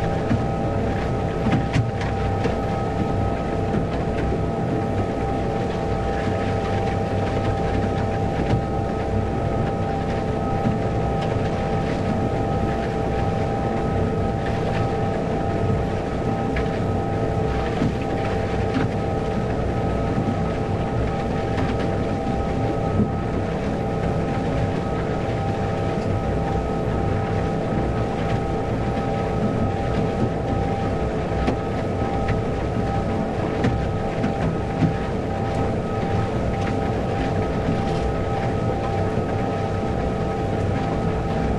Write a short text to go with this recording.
recorded aboard the Vancouver Harbour Patrol boat as part of MAC Artist-In-Residence program for CFRO Co-op Radio